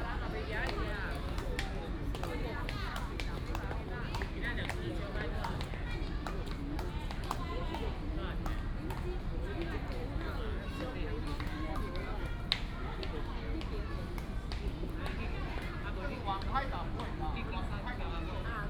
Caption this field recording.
A lot of people playing badminton, in the Park